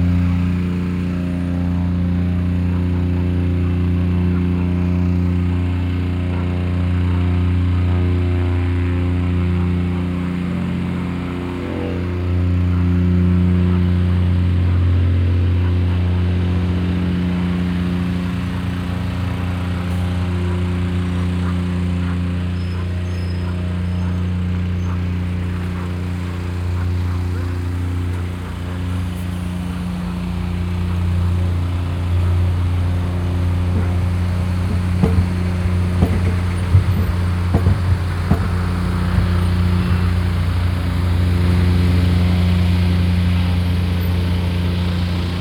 (binaural) man operating a plate compactor, evening a patch of sand at a construction site. the drone fades and morphs as the operator moves behind a concrete manhole.
Poznan, Poland, 7 November